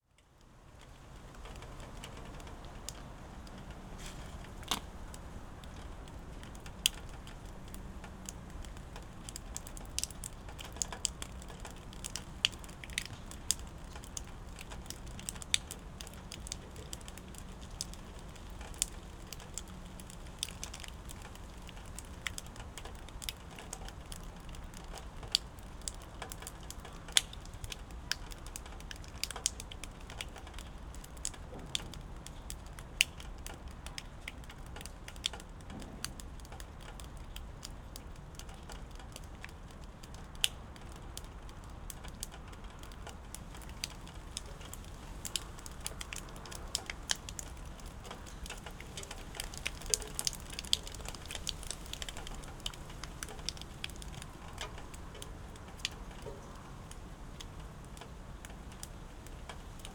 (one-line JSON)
{"title": "Immerath, Erkelenz, Germany - Immerath, church, raindrops", "date": "2012-11-01 13:40:00", "description": "Immerath church, rain drops, silent village", "latitude": "51.05", "longitude": "6.44", "altitude": "96", "timezone": "Europe/Berlin"}